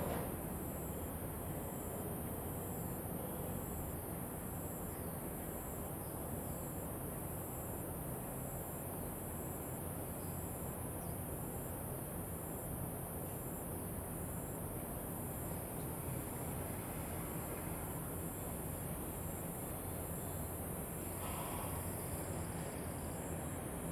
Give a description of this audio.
under the railroad tracks, Next to a pig farm, Traffic Sound, Train traveling through, Zoom H2n MS +XY